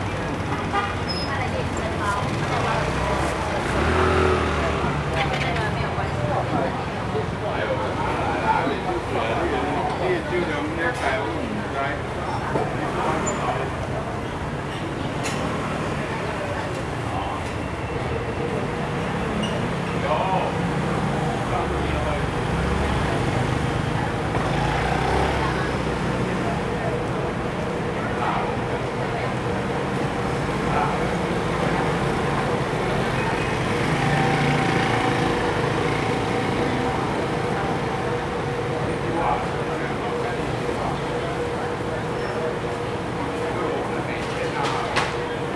Ln., Liancheng Rd., Zhonghe Dist., New Taipei City - In the Market
In the Market, Traffic Sound
Sony Hi-MD MZ-RH1 +Sony ECM-MS907